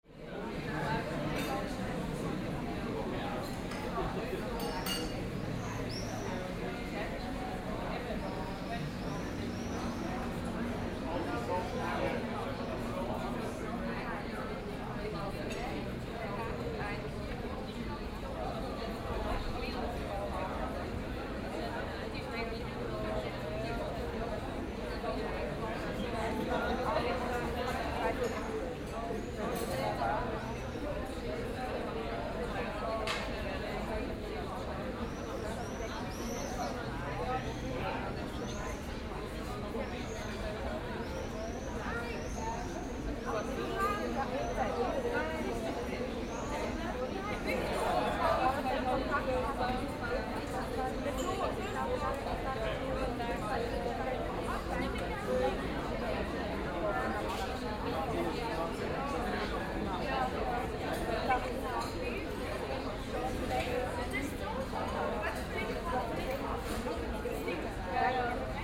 {"title": "Aarau, Tuchlaube, Evening - Tuchlaube", "date": "2016-06-28 18:40:00", "description": "In front of the Theater of Aarau called Tuchlaube, people chatting in the café.", "latitude": "47.39", "longitude": "8.04", "altitude": "386", "timezone": "Europe/Zurich"}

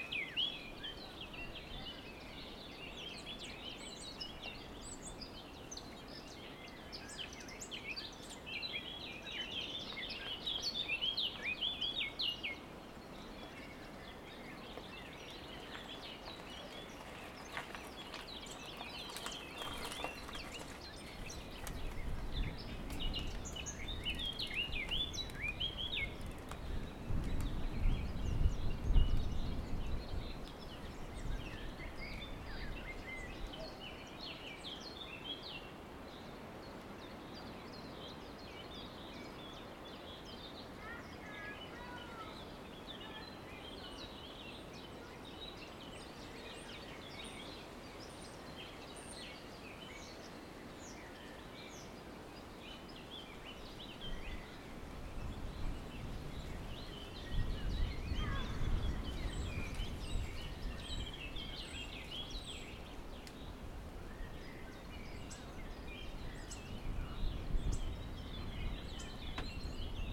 {"title": "Halasz Csarda - Birds near the river", "date": "2021-04-05 14:20:00", "description": "Birds singing in the forest near the river Drava. Cyclist and people with a small child passing by on the trail between the forest and the river. Recorded with Zoom H2n (XY, gain on 10, on a small tripod) placed on a wooden ornithological observatory.", "latitude": "46.30", "longitude": "16.87", "altitude": "130", "timezone": "Europe/Zagreb"}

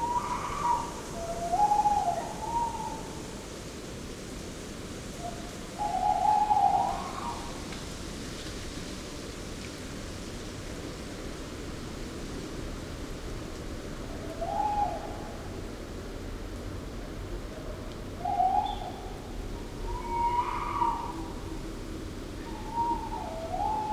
{"title": "Negast forest, Schupperbaum, Rügen - Owl [Waldkauz] duette#2", "date": "2021-11-10 04:14:00", "description": "Owl (Waldkauz) couple (male/fem) in the woods - for daytime they split - at night they call and find each other\novernight recording with SD Mixpre II and Lewitt 540s in NOS setup", "latitude": "54.37", "longitude": "13.28", "altitude": "14", "timezone": "Europe/Berlin"}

